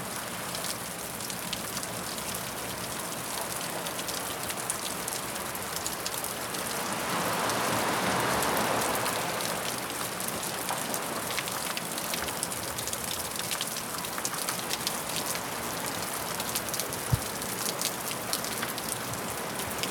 kimmeridge bay water dripping down cliff